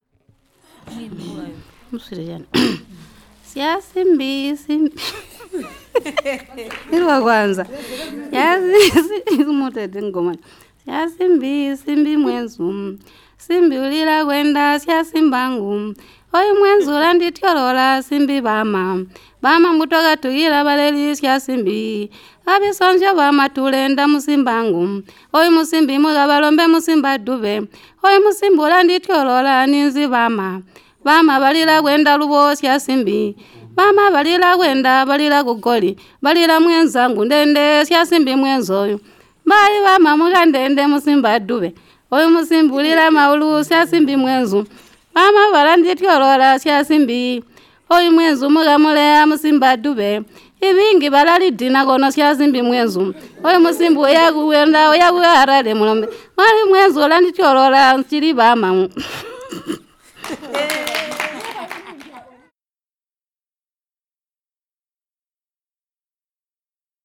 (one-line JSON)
{"title": "Tusimpe Pastoral Centre, Binga, Zimbabwe - Regina's lullaby for a girl...", "date": "2016-07-06 11:30:00", "description": "...during the second day of our workshop, we talked at length about the culture among the Batonga and how it is passed on traditionally especially among women and children… Regina, community based facilitator for Kariyangwe sung a beautiful lullaby for us as an example… we encourage her to sing again and record herself...", "latitude": "-17.63", "longitude": "27.33", "altitude": "605", "timezone": "GMT+1"}